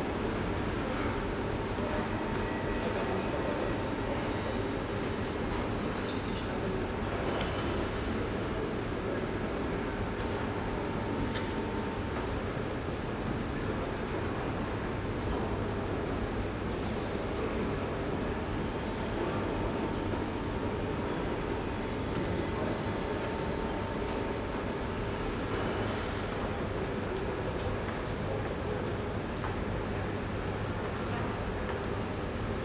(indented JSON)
{
  "title": "Stadt-Mitte, Düsseldorf, Deutschland - Düsseldorf, Stilwerk, second floor",
  "date": "2012-11-06 17:30:00",
  "description": "Inside the Stilwerk building on the second floor of the gallery. The sound of people talking and moving and the bell and the motor of the elevators in the open modern architecture.\nThis recording is part of the exhibition project - sonic states\nsoundmap nrw - topographic field recordings, social ambiences and art places",
  "latitude": "51.22",
  "longitude": "6.78",
  "altitude": "47",
  "timezone": "Europe/Berlin"
}